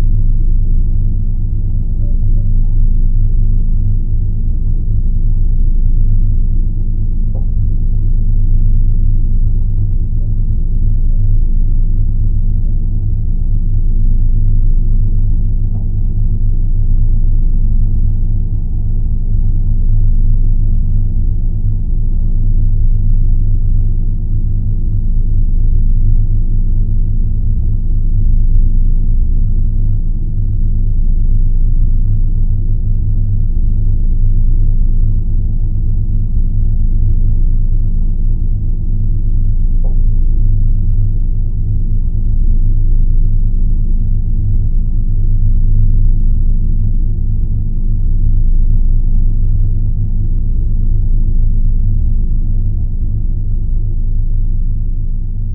Birštonas, Lithuania, inside mineral water evaporation tower - pump work
Mineral water evaporation tower. Geophone on a wall - pump drone.